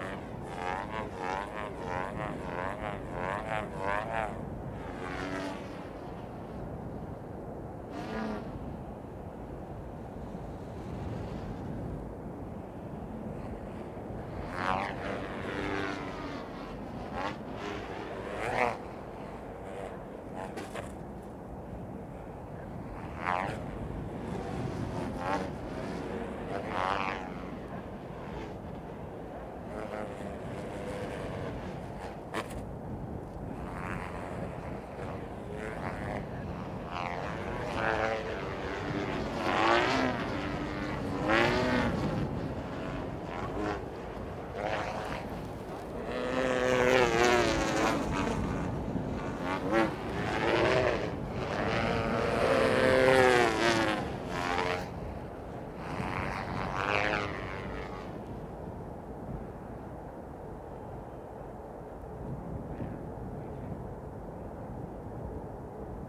Sychronous kite flying
2 kite fliers practicing extremely precise synchronous flying on a windy day.
Berlin, Germany